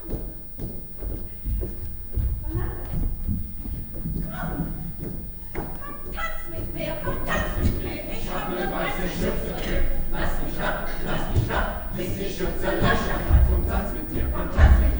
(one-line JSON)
{"title": "wuppertal, kurt-drees-str, opera", "description": "inside the opera, during the performance of the dance piece komm tanz mit mir by the pina bausch ensemble\nsoundmap nrw - social ambiences and topographic field recordings", "latitude": "51.27", "longitude": "7.19", "altitude": "159", "timezone": "Europe/Berlin"}